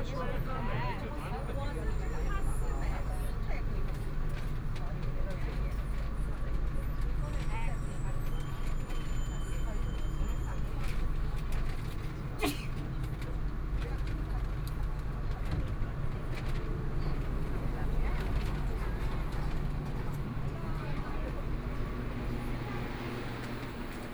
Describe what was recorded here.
from Laoxime Station to South Xizang Road Station, Binaural recording, Zoom H6+ Soundman OKM II